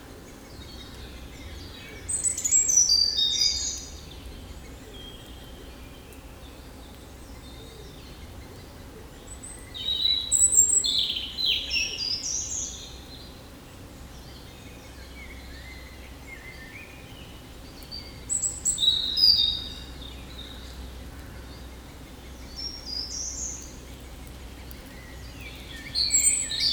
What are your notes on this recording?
Recording of the birds in the woods. The bird is a European Robin.